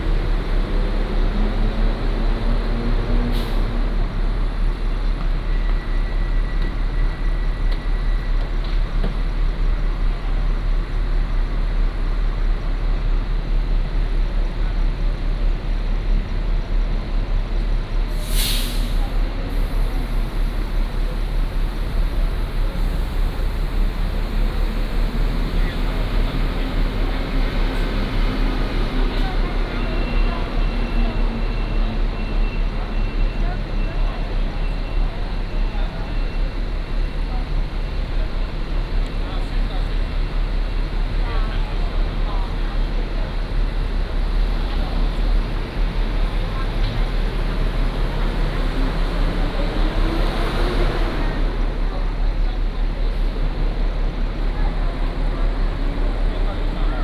Taiwan High Speed Rail station, Taichung City - In the bus station
In the bus station
28 April 2015, 15:45